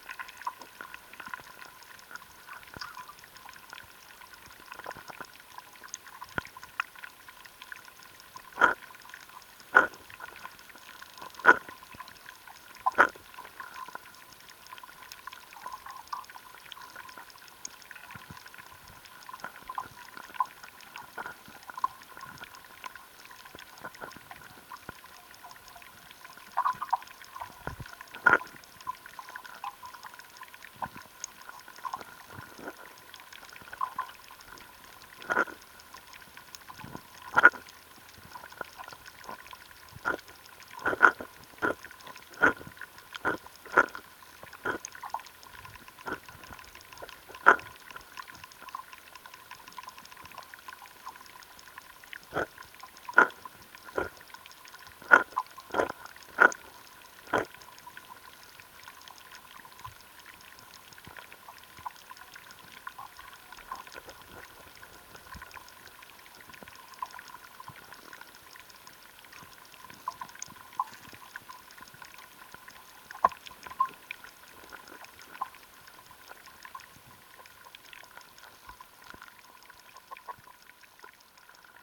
Baltakarčiai, Lithuania, pond underwater
Piloting drone found some pond in the meadow. Went to it with hydrophones.
10 August 2022, ~19:00